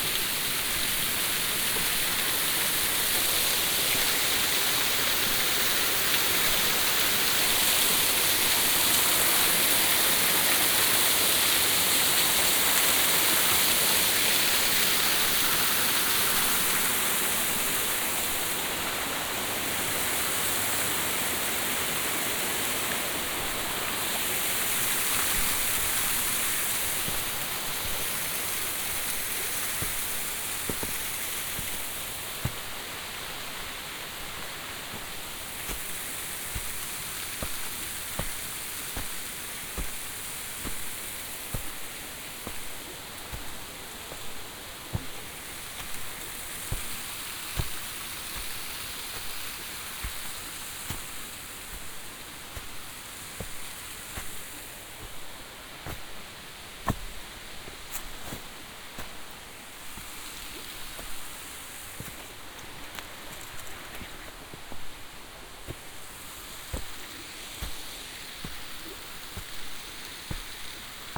pohorje waterfall from above - walk along waterfall
walk upwards (binaural) around the waterfall